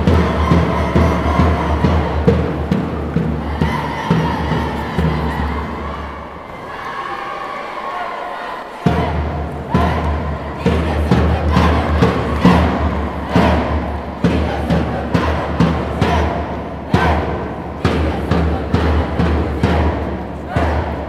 Court-St.-Étienne, Belgique - Parc à Mitrailles
Extremely loud shoutings from scouts, at the end of a very big race called K8strax.